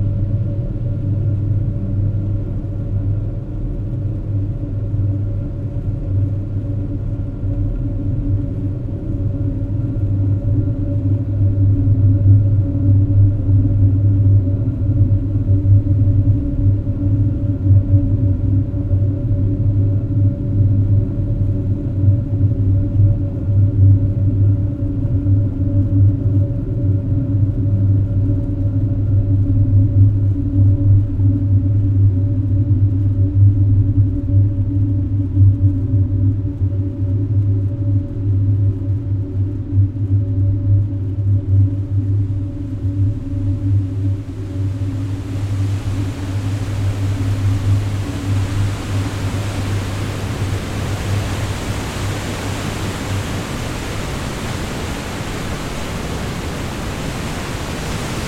Hofstrasse, Birsfelden, Schweiz - Kraftwerk Birsfelden
inside a hydro-electric powerplant
Take a dive down to the turbines and listen to the rotation from the inside. The noise is transmitted directly through the building's concrete structure up to the iron handrail of the pedestrian bridge, where the sound is picked up with the modified magnetic pickup from a bass guitar.
Recorded on a Zoom H6 with added sound from the MS microphone.
28 January 2018, ~15:00